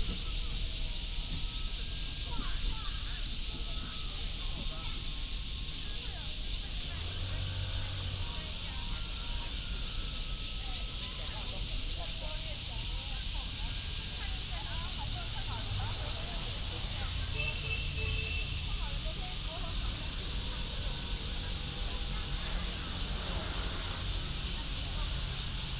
甲蟲公園, Zhongli Dist., Taoyuan City - in the Park
in the Park, traffic sound, Cicadas, Garbage clearance time, Binaural recordings, Sony PCM D100+ Soundman OKM II